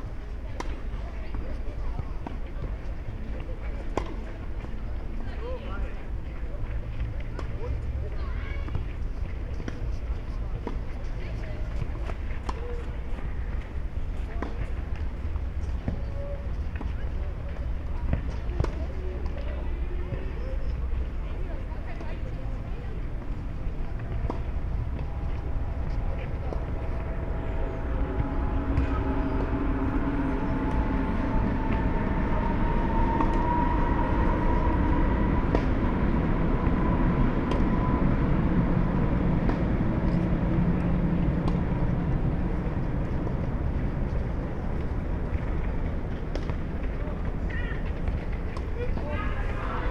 Köln, rail triangle, Gleisdreieck, sound of soccer and tennis training, trains.
(Sony PCM D50, Primo EM172)